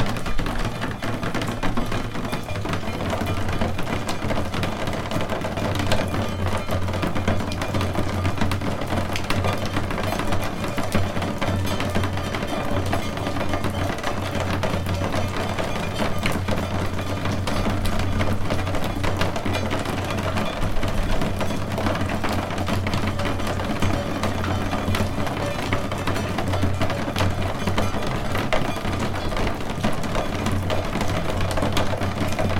Rain as leaded falling petals (or an ancient shamanic sardinian ritual))
Province of Carbonia-Iglesias, Italy